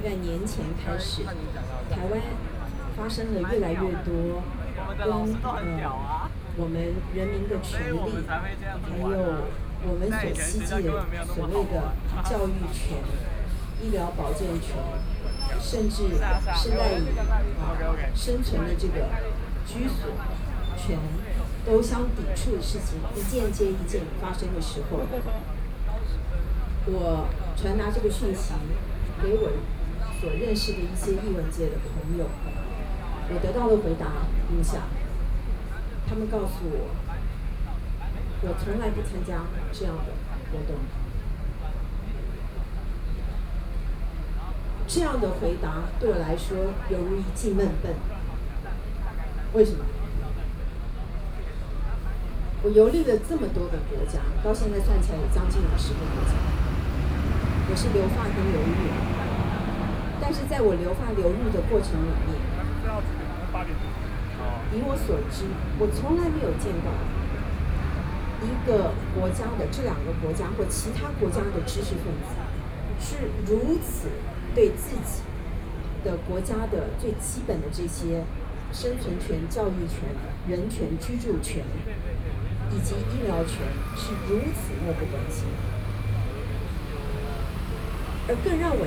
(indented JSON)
{
  "title": "Zhongshan S. Rd., Taipei City - Speech",
  "date": "2013-10-09 20:01:00",
  "description": "Speech, writers are protesting government, Binaural recordings, Sony PCM D50+ Soundman OKM II",
  "latitude": "25.04",
  "longitude": "121.52",
  "altitude": "11",
  "timezone": "Asia/Taipei"
}